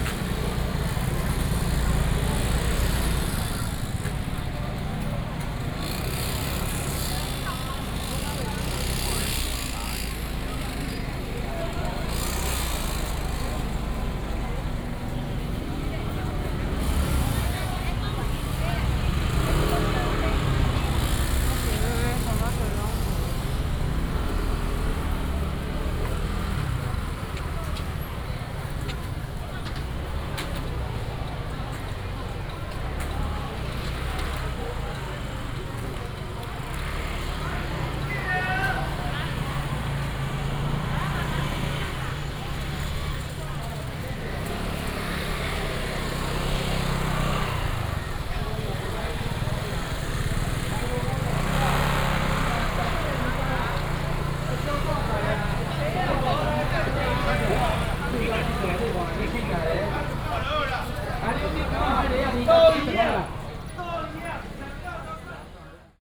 in the traditional market, vendors selling sound, traffic sound
Taoyuan City, Taiwan, 4 January 2018, 09:12